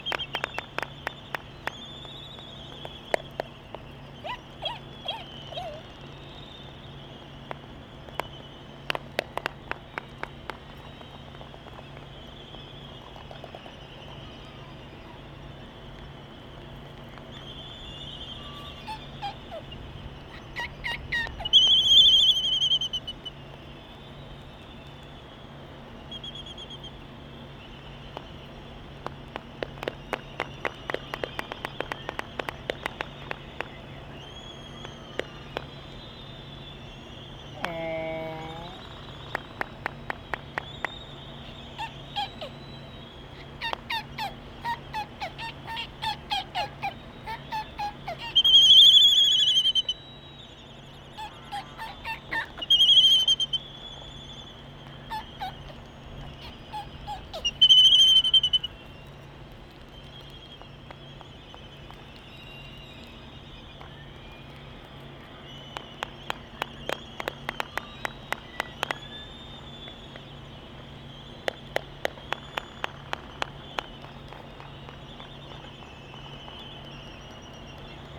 Laysan albatross dancing ... Sand Island ... Midway Atoll ... calls and bill clapperings ... open Sony ECM959 one point stereo mic to Sony Minidisk ... warm ... sunny blustery morning ...